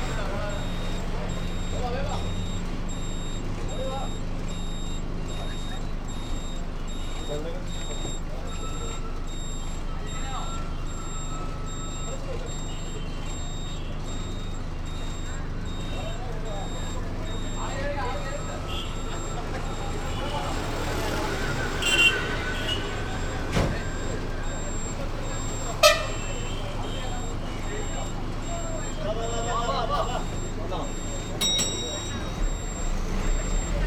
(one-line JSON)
{"title": "bus drive munnar to adimali", "date": "2009-02-21 10:21:00", "description": "private bus with music", "latitude": "10.09", "longitude": "77.06", "altitude": "1457", "timezone": "Asia/Kolkata"}